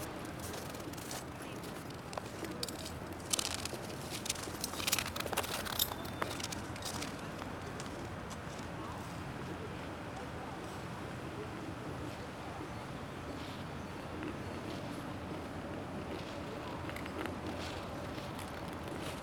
Hofvijver, Den Haag Ice Skating